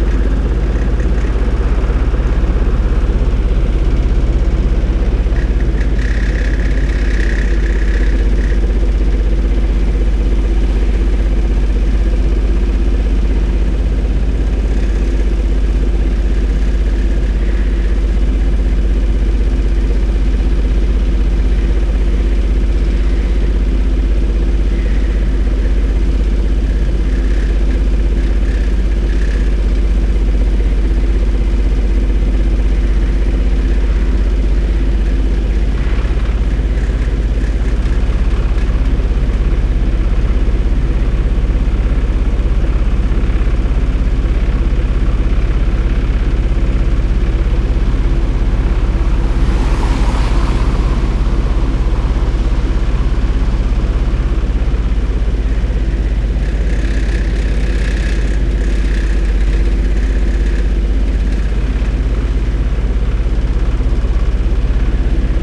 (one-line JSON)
{"title": "berlin: friedelstraße - the city, the country & me: generator", "date": "2014-07-25 01:51:00", "description": "sewer works site, generator, rattling hose clamp\nthe city, the country & me: july 25, 2014", "latitude": "52.49", "longitude": "13.43", "altitude": "46", "timezone": "Europe/Berlin"}